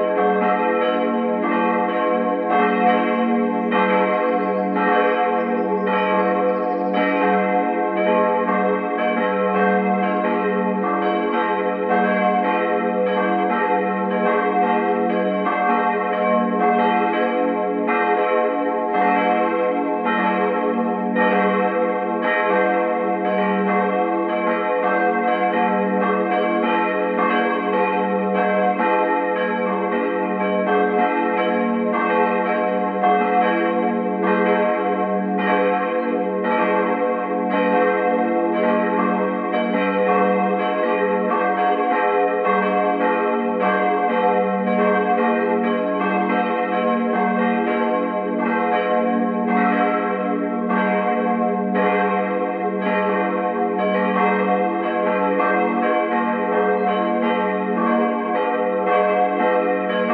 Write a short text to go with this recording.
Sound Recordings of Church Bells from Evangelical Reformed Church in Hameln.